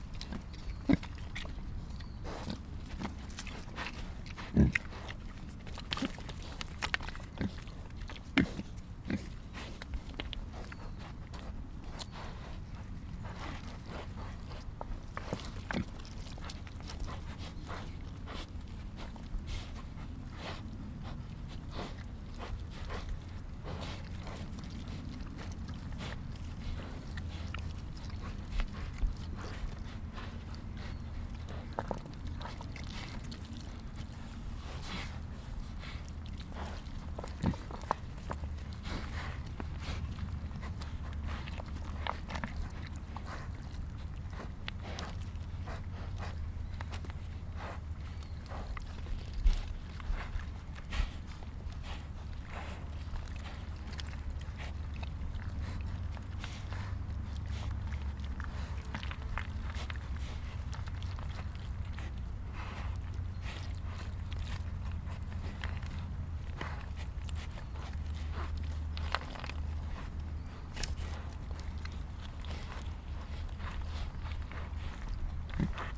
Wildschweine über der Tropfsteinhöhle Wiehl, die mit den Rüsseln wühlen und grunzen.
Wild pigs over the stalactite cave Wiehl, they dig with the trunks and grunt.
25 July 2014, 14:00